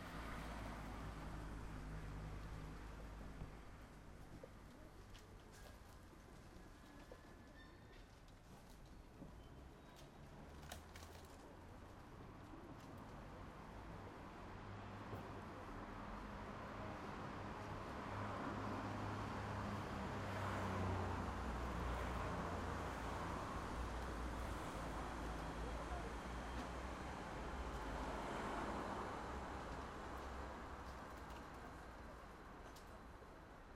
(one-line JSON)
{"title": "Rijeka, Pigeons Invasion - Rijeka, Pigeon Invasion", "date": "2010-08-01 17:35:00", "latitude": "45.33", "longitude": "14.44", "altitude": "7", "timezone": "localtime"}